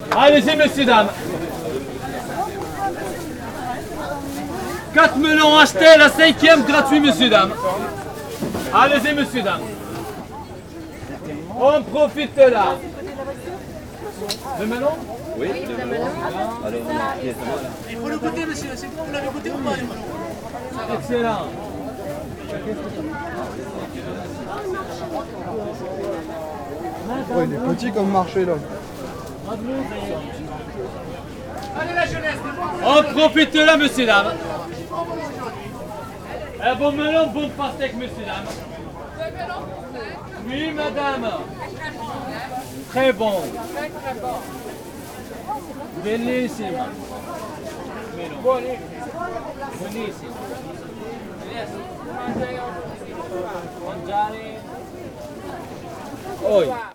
{
  "title": "l'isle sur la sorgue, market, fruit seller",
  "date": "2011-08-25 18:34:00",
  "description": "At he weekly market on the corner of Rue Andre Autheman and Rue Carnot. A fruit seller offering his products.\nInternational village scapes - topographic field recordings and social ambiences",
  "latitude": "43.92",
  "longitude": "5.05",
  "altitude": "60",
  "timezone": "Europe/Paris"
}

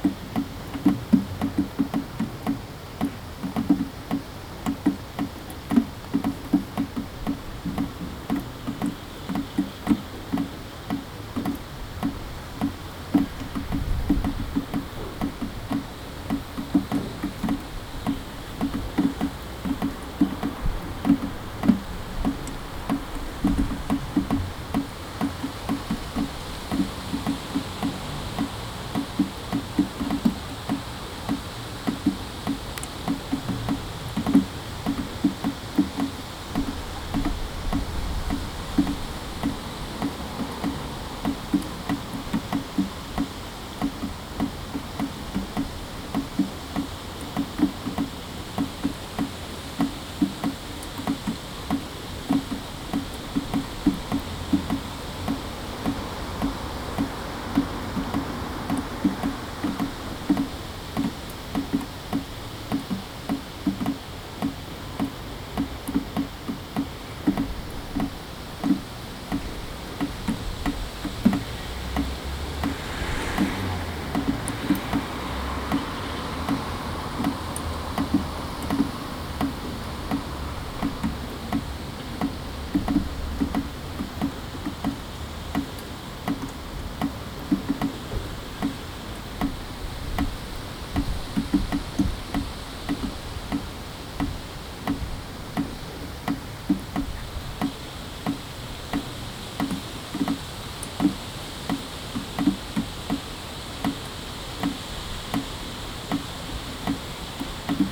{
  "title": "Ares, A Coruña, Spain - Rain25082015LCG",
  "date": "2015-08-25 00:32:00",
  "description": "Recorded from an attic window using a Zoom H2n.",
  "latitude": "43.43",
  "longitude": "-8.24",
  "altitude": "5",
  "timezone": "Europe/Madrid"
}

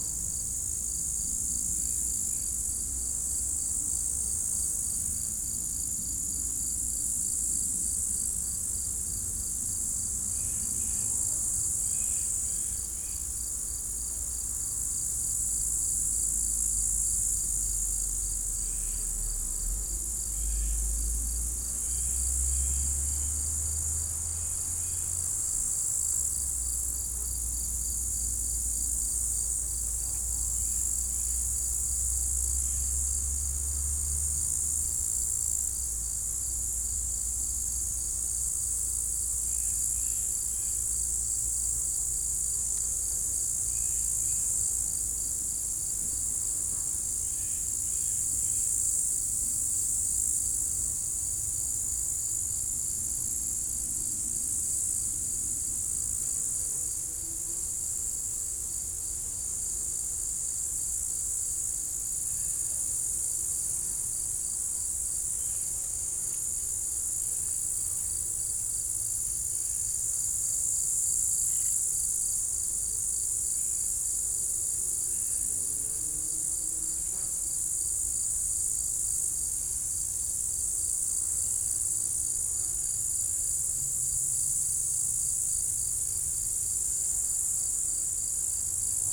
{
  "title": "Col du sapenay, Entrelacs, France - Prairie altitude",
  "date": "2022-07-10 18:15:00",
  "description": "Une prairie ensoleillée au col du Sapenay, polyrythmie naturelle des stridulations, grillons, criquets, sauterelles. De temps à autre utilisée comme pâturage pour les vaches.",
  "latitude": "45.82",
  "longitude": "5.87",
  "altitude": "893",
  "timezone": "Europe/Paris"
}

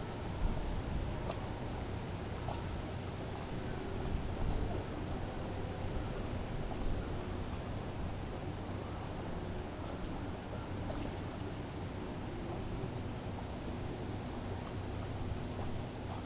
{
  "title": "Berlin, Stralau - waterplane returns",
  "date": "2010-07-25 10:50:00",
  "description": "waterplane returns from a round trip, surprisingly silent... river bank ambience, wind, distant sunday churchbells",
  "latitude": "52.49",
  "longitude": "13.48",
  "altitude": "28",
  "timezone": "Europe/Berlin"
}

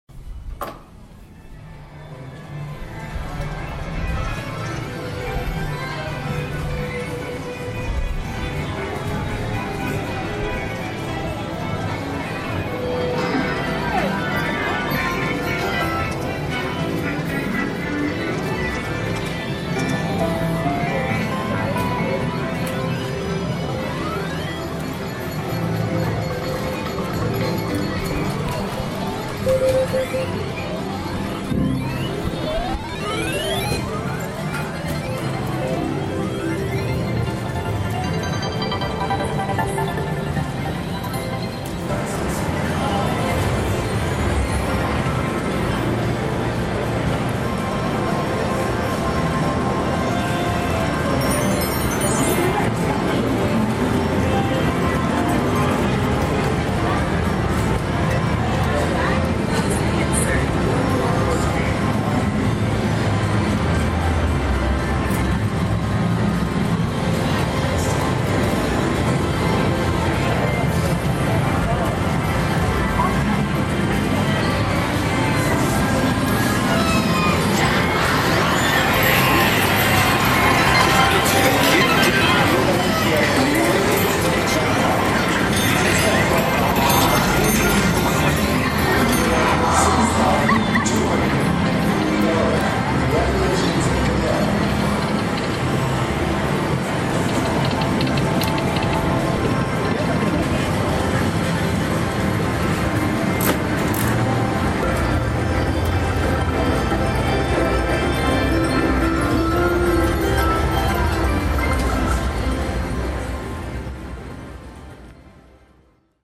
{
  "title": "Barona Casino, San Diego County, USA - Slot Floor, Saturday Night",
  "date": "2012-12-01 19:03:00",
  "description": "Walk onto slot floor from hotel, Barona Casino. Through casino machines.",
  "latitude": "32.94",
  "longitude": "-116.87",
  "altitude": "404",
  "timezone": "America/Los_Angeles"
}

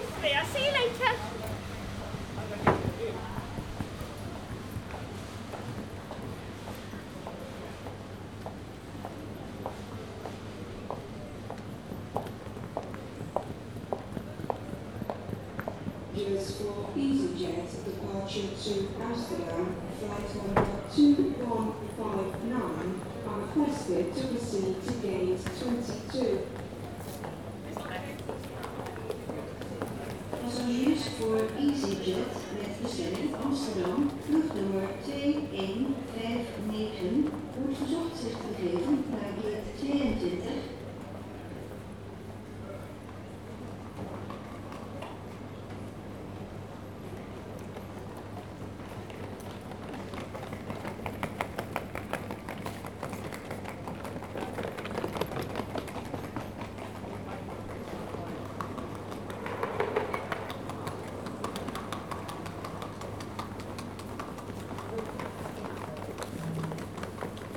{
  "title": "London Luton Airport, Airport Way, Luton - Luton Airport departure lounge",
  "date": "2015-03-11 15:10:00",
  "description": "Waiting in the departure lounge at Luton Airport, sitting next to an authorised personnel only door, and in front of a hall leading to some of the departure gates.\nYou hear the rising and fading sounds of footsteps and ticking of luggage wheels across the floor tiles, staff and travellers talking briefly, and the hum of a fridge in the dining area opposite the seats.\nRecorded on zoom H4n internal mics.",
  "latitude": "51.88",
  "longitude": "-0.38",
  "altitude": "161",
  "timezone": "Europe/London"
}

